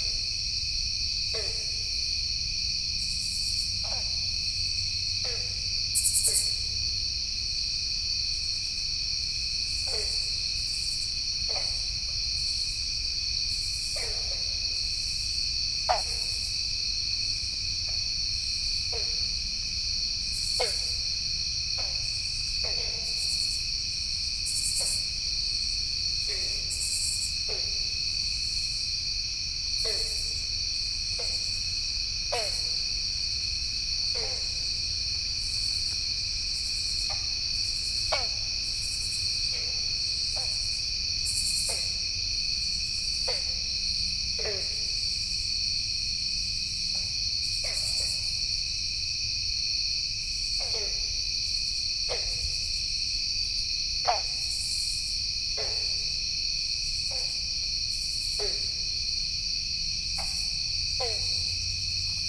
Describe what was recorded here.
Crossing a field full of singing insects to reach a pond. Green frogs in pond.